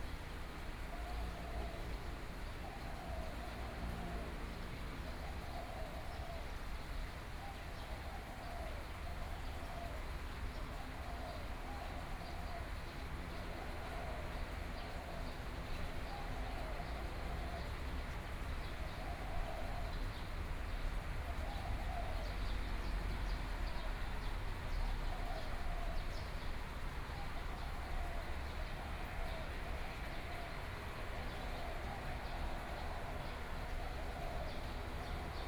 Morning in the park, Traffic Sound, Birds singing
Binaural recordings